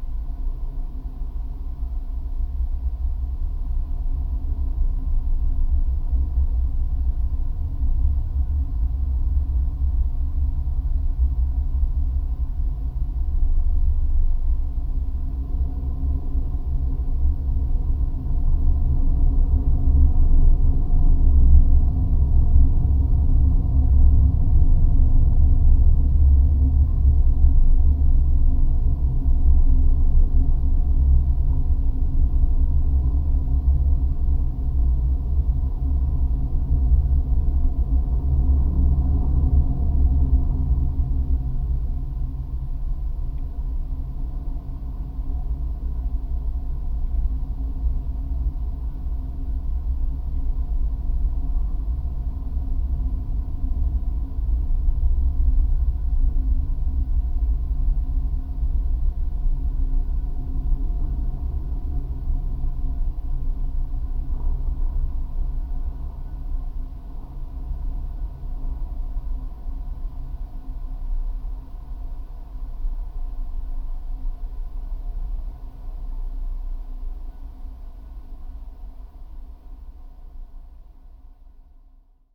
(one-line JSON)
{"title": "Alytus, Lithuania, White Rose Bridge", "date": "2020-10-22 15:30:00", "description": "Geophone on the rails of the bridge.\nThe highest Lithuania’s pedestrian and bicycle bridge (project by V. Karieta) was built in Alytus in 2015, on the remains of former railway bridge piers. It has been registered in the Book of Lithuanian Records. It is 38.1 m in height and 240,52 m in length. It was officially opened on 4 June 2016. The bridge connects two bicycle paths running on the both banks of the Nemunas River that divides the town.\nIn the second half of the 19th century, a military railway to Alytus classified as a fortress of the 3rd class, was built to reinforce the western borders of the Russian empire (Lithuania then was a part of it). A 33 m high and 240.5 m long bridge of an innovative cantilever design was built in this place in 1897 to 1899 (project by N. Beleliubskis). The carriageway was designed for rail traffic and horse-driven carriages.\nDuring World War I, in 1915, the retreating tsarist army blew up the bridge.", "latitude": "54.39", "longitude": "24.08", "altitude": "59", "timezone": "Europe/Vilnius"}